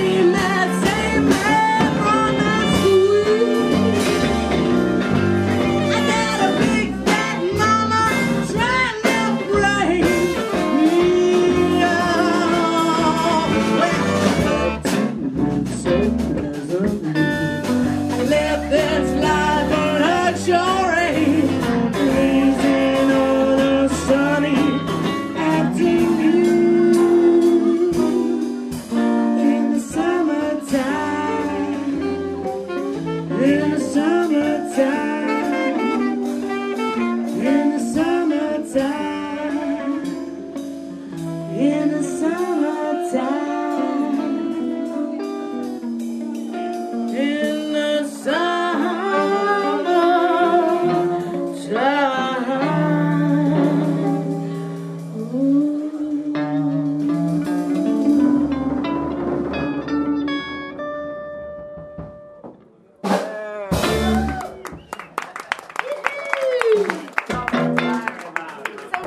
Sunny Afternoon, performed in Prague in a souterrain pub
A good old song reappears, being sung by DUCHESS & THE KITTENS, being sung, not just hummed or thought to be sung...